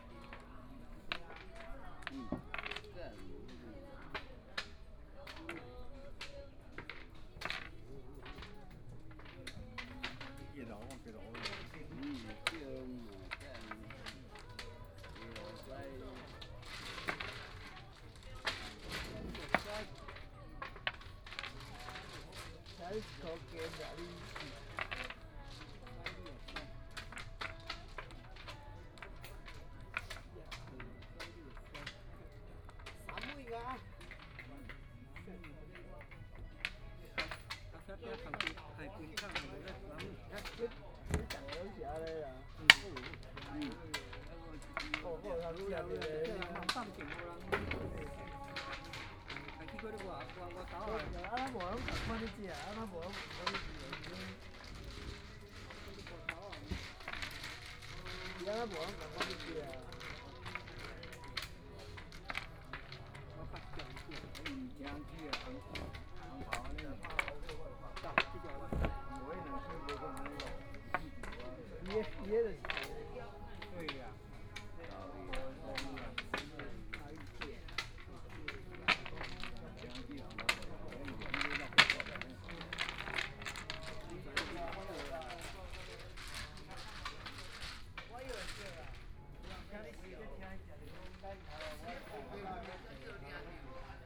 Liyu (Carp) Mountain Park - in the Park
Dialogue among the elderly, Singing sound, Old man playing chess, Binaural recordings, Zoom H4n+ Soundman OKM II ( SoundMap2014016 -5)